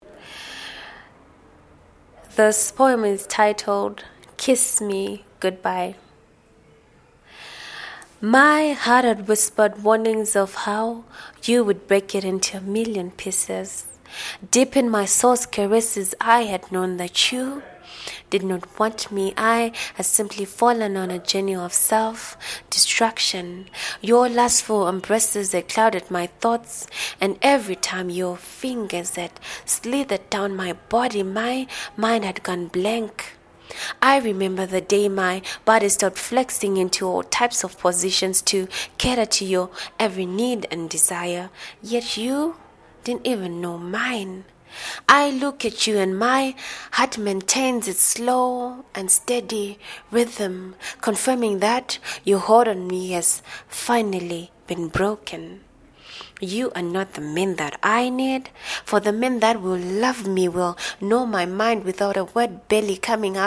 The Book Cafe, Harare, Zimbabwe - More Blessings, “Kiss me good-bye…”
More Blessings, “Kiss me good-bye…”
more poems and an intro archived at :